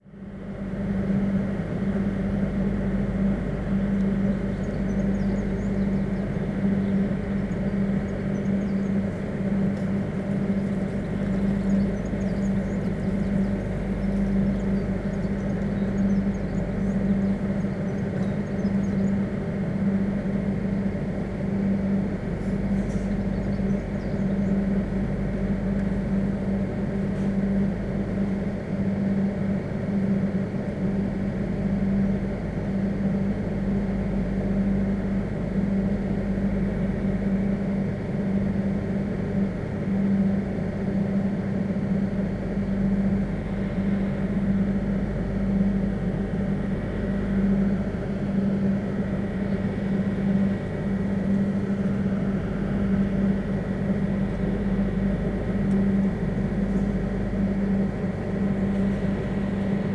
Biergarten, Tempelhofer Feld, Berlin - generator hum
the nearby Biergarten is powered by a diesel generator engine. checking the redundant sonic pattern by moving here and there. not exactly exiting, but since the beer garden seems to be established, it's sounds have become part of the soundscape at this spot, so.
(Sony PCM D50, DPA4060)